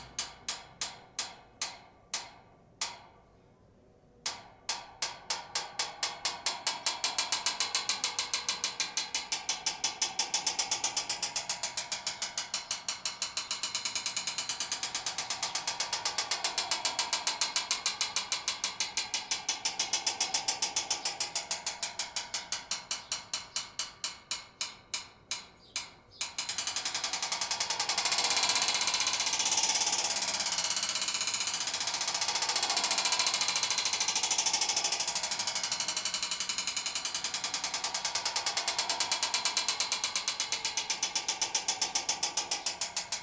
Calle Calvario, Guadalest, Alicante, Espagne - Castel de Guadalest - Espagne - Tourniquet métallique
Castel de Guadalest - Province d'Alicante - Espagne
Tourniquet métallique
Zoom F3 + AKG 451B